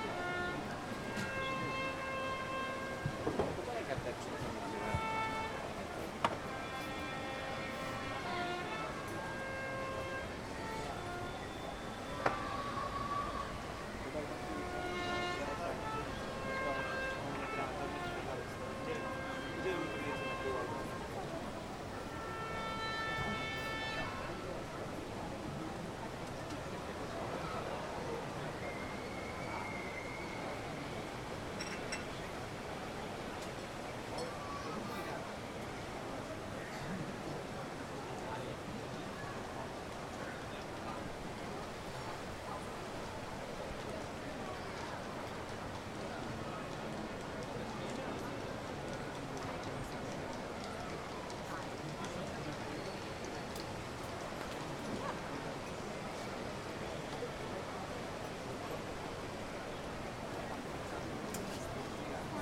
Main Square, Kraków
Soundmark of Kraków, Hejnal Mariacki - the trumpet melody played from the tower commemorates the medieval history of XIII century battles in defense of the town.
Kraków, Poland, 15 August